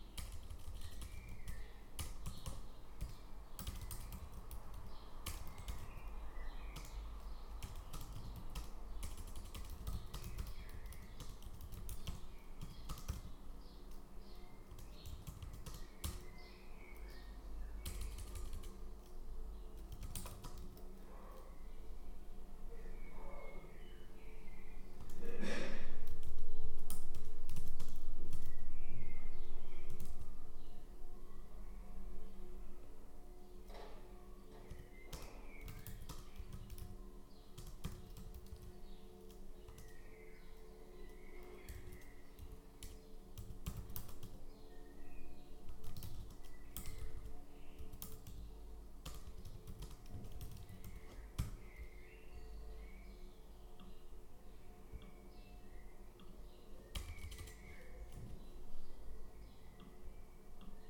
Kreuzbergstraße, Berlin, germany - in the kitchen
open window and activities in the kitchen. a day before taking train with antoine (his voice is also in this recording) and others to istanbul. in the mood of preparing...
2 x dpa 6060 mics
Deutschland